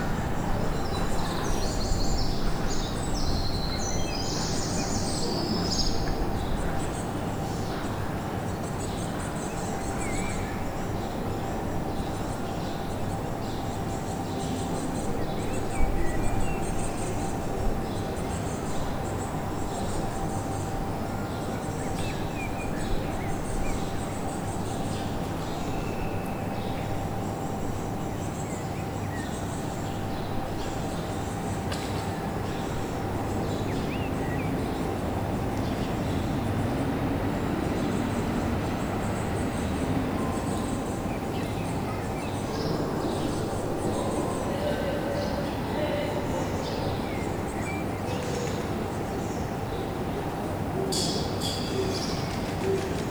Rue de la Légion dHonneur, Saint-Denis, France - Jardin Pierre de Montreuil
This recording is one of a series of recording, mapping the changing soundscape around St Denis (Recorded with the on-board microphones of a Tascam DR-40).
25 May 2019, 11:05